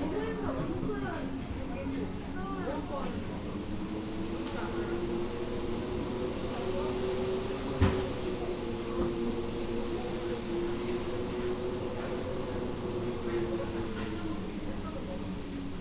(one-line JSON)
{"title": "Osaka, Umeda Sky Building B2 level - wind from the elevator", "date": "2013-03-30 23:01:00", "description": "recorded in the basement level of Umeda Sky Building. wind coming from elevator shafts whining in a slit between two sliding doors. many people around waiting in lines for a table in restaurants. level B2 is a food court.", "latitude": "34.71", "longitude": "135.49", "altitude": "3", "timezone": "Asia/Tokyo"}